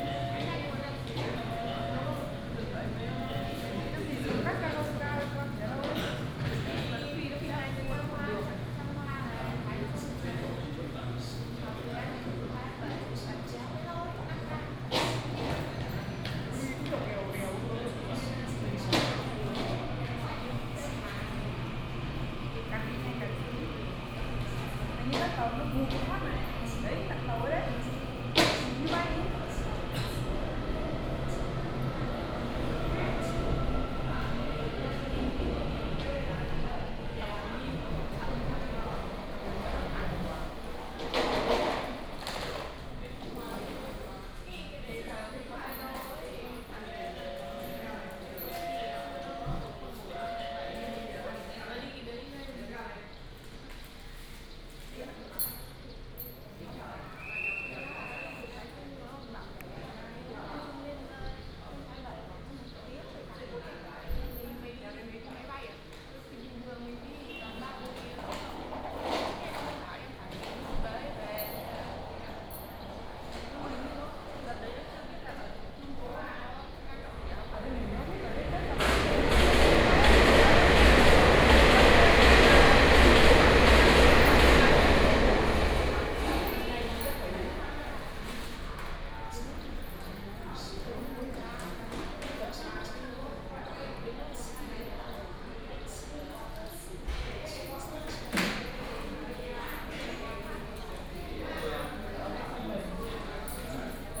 二水火車站, 彰化縣二水鄉 - In the station hall
In the station hall, lunar New Year
Binaural recordings, Sony PCM D100+ Soundman OKM II
Changhua County, Taiwan, 15 February 2018